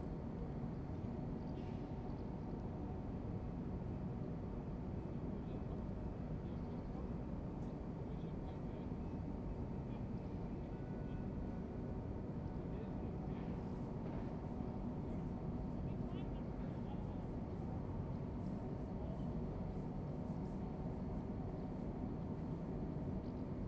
Berlin Wall of Sound, Teltowkanal Factory Noise 080909
Berlin, Germany